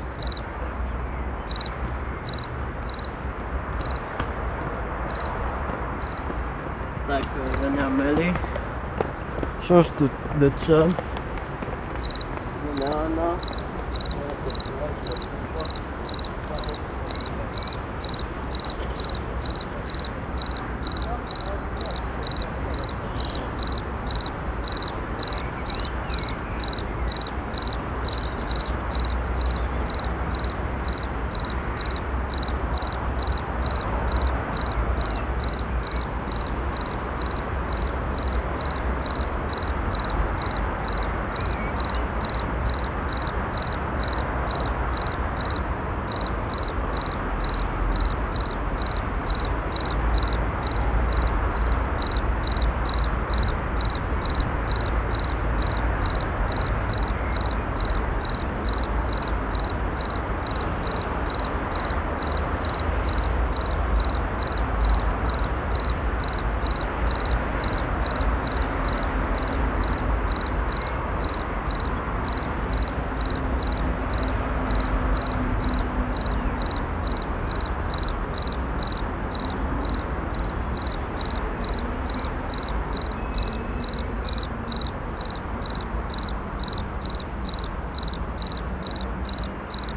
Cetatuia Park, Klausenburg, Rumänien - Cluj, Cetatuia, evening crickets
At the cetatuia hill. The sounds of local crickets in the evening.
international city scapes - field recordings and social ambiences